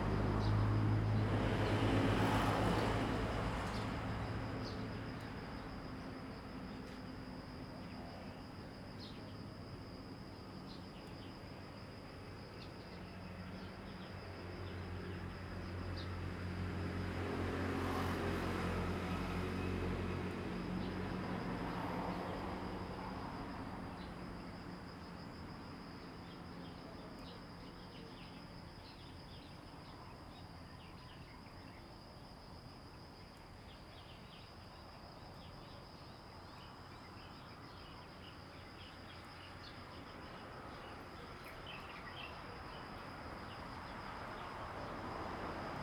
Birdsong, Traffic Sound
Zoom H2n MS +XY